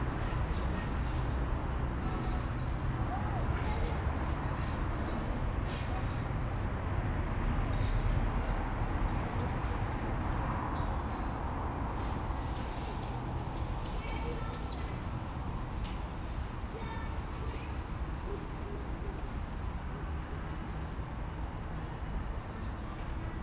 leipzig lindenau, karl-heine-platz, auf der tischtennisplatte.
karl-heine-platz auf einer tischtennis-platte. vater & kind auf dem spielplatz, gitarrenmusik aus dem eckhaus schräg rüber. autos.
Leipzig, Deutschland, 31 August 2011